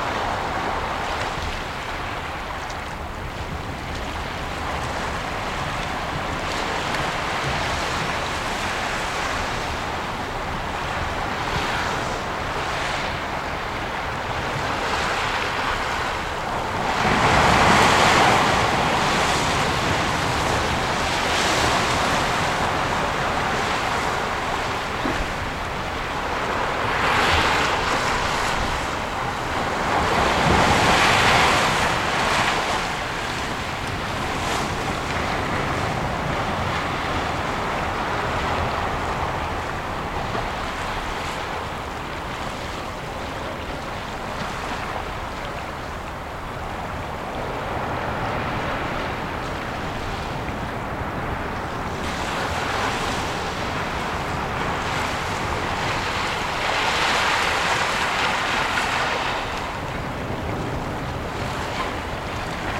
île Percée, Moëlan-sur-Mer, France - Ile percée
Little waves on "Ile Percée", Zoom H6
8 April 2016, 11:59